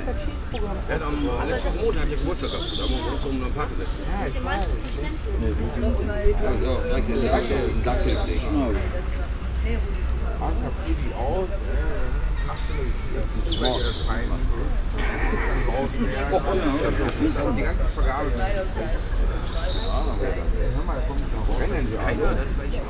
cologne, brüsseler platz, at the benches
conversations of people that sit on benches at noon and drink beer
soundmap nrw - social ambiences - sound in public spaces - in & outdoor nearfield recordings
June 2008